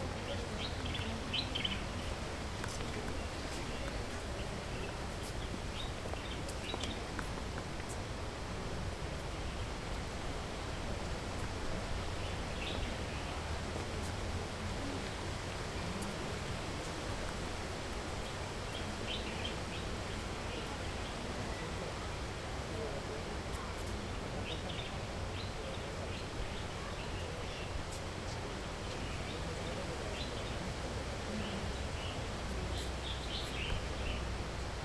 Rue Yves St Laurent, Marrakech, Morocco - Jardin Majorelle
Oiseaux dans le calme du jardin